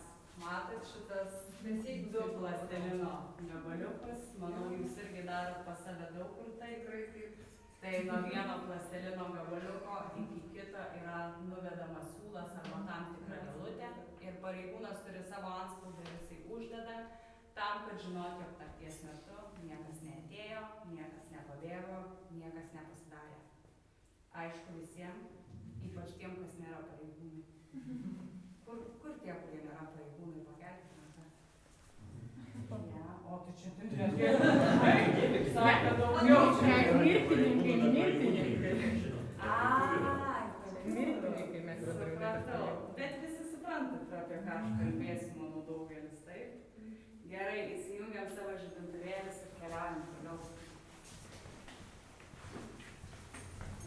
Vilnius, Lithuania, night excusion in Lukiskiai prison
Night walk in recently closed Lukiskiai prison. Interrogation/interview room. Recorded with Sennheiser ambeo headset.